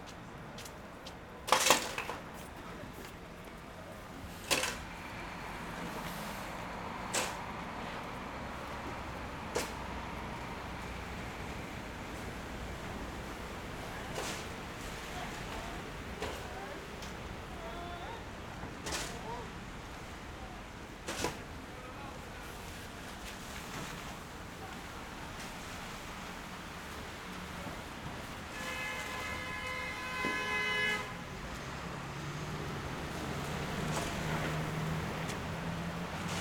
Lexington Ave, New York, NY, USA - Workers shovel snow off the sidewalk
Workers shovel snow off the sidewalk at Lexington Avenue.
United States